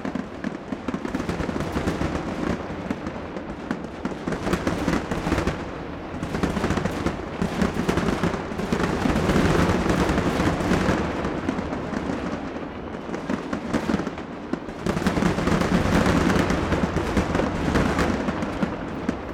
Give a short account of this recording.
Fireworks ricocheting off of the concrete walls of the Federal Court House. Recorded with a Marantz PMD661 and a pair of DPA 4060s